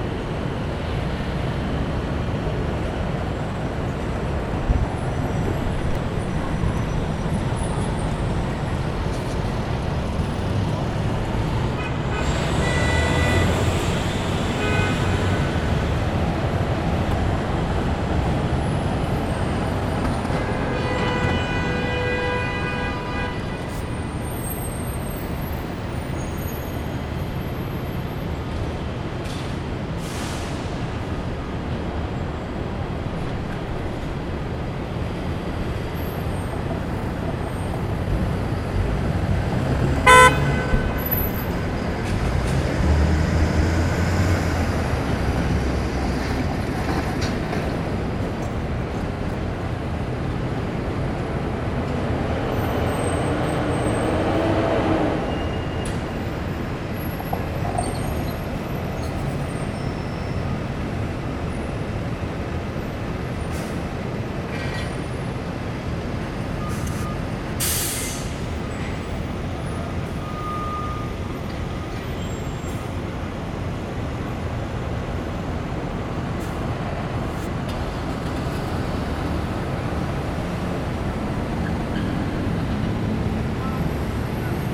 Field recording from the 6th floor garden of a financial district building.